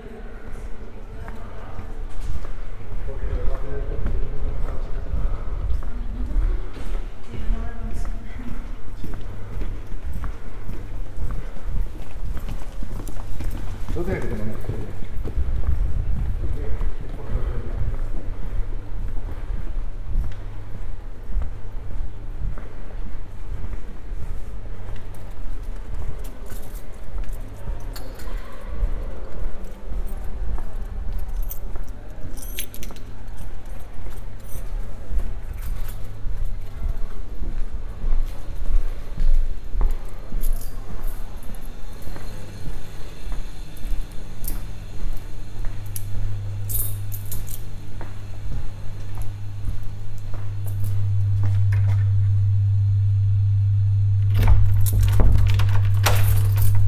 A soundwalk through the Fine Arts Faculty building, Cuenca, Spain.
Luhd binaural microphones -> Sony PCM-D100